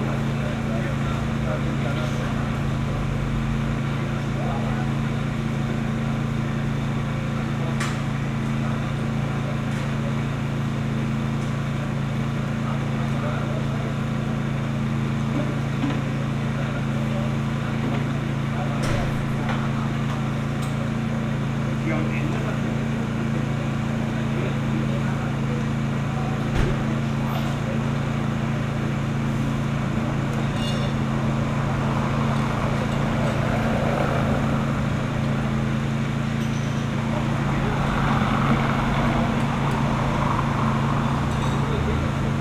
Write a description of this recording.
saturday night emergency repair of a power line, the city, the country & me: july 7, 2012